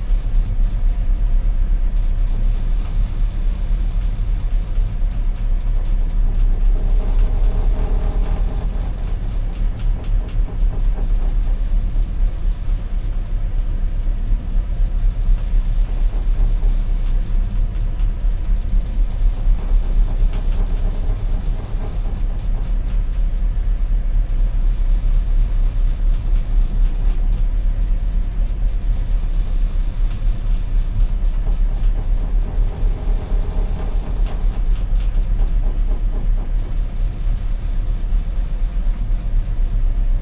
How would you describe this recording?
Cabin 6105 aboard the Dana Sirena Ferry. travelling between Harwich (UK) & Esbjerg (DN). Engines purring. Cabin rattling.